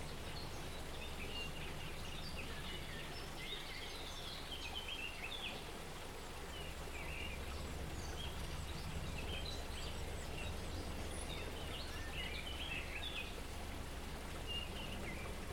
This recording was made using a Zoom H4N. The recorder was positioned on the footbridge near the weir. The nature sounds were overlaid by a military helicopter passing overhead. This recording is part of a series of recordings that will be taken across the landscape, Devon Wildland, to highlight the soundscape that wildlife experience and highlight any potential soundscape barriers that may effect connectivity for wildlife.
England, United Kingdom, 3 May 2022